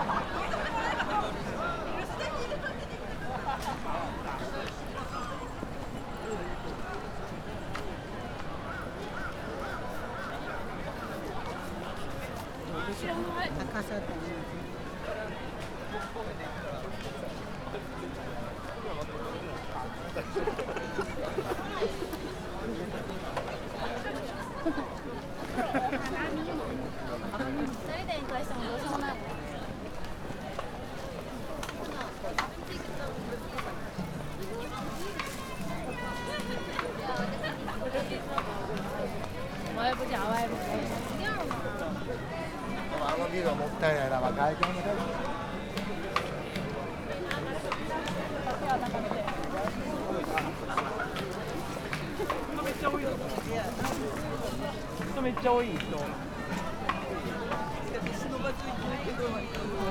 Tokyo, Uedo Park - evening picnic
big picnic in the park, people gathering all over the place, huge crowd moving around the park, grilling, eating, drinking, having fun, reflecting on blooming sakura trees - the japanese way.
北葛飾郡, 日本, 28 March 2013